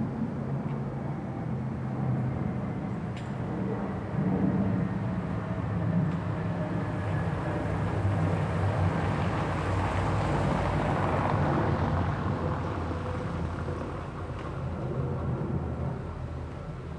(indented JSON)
{
  "title": "Bissingen an der Teck, Deutschland - Bissingen an der Teck - Street setting, church bell",
  "date": "2014-08-10 14:27:00",
  "description": "Bissingen an der Teck - Street setting, church bell.\nBissingen was visited by R. M. Schafer and his team in 1975, in the course of 'Five Village Soundscapes', a research tour through Europe. So I was very curious to find out what it sounds like, now.\n[Hi-MD-recorder Sony MZ-NH900, Beyerdynamic MCE 82]",
  "latitude": "48.60",
  "longitude": "9.49",
  "altitude": "416",
  "timezone": "Europe/Berlin"
}